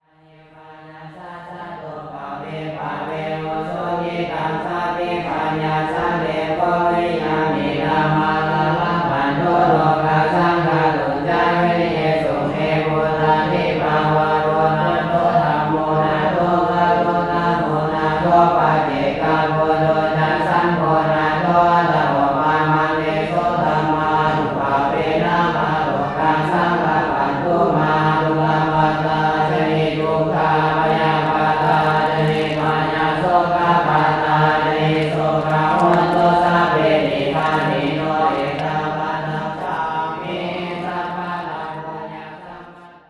Luang Prabang, Wat Mai, Ceremony
Short extract, longer recordings coming soon.
Luang Prabang, Laos, 20 April 2009, 18:00